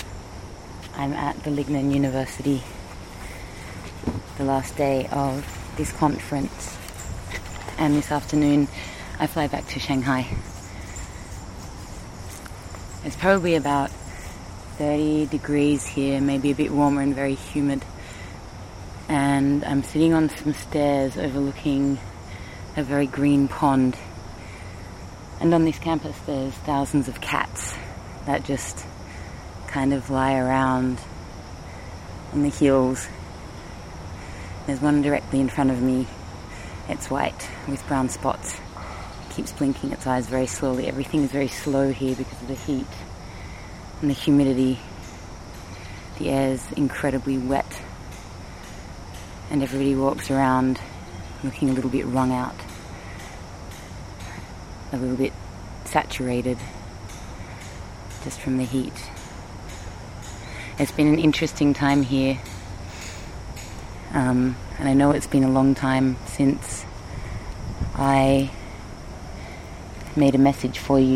lingnan university, cats, cicadas, bow tie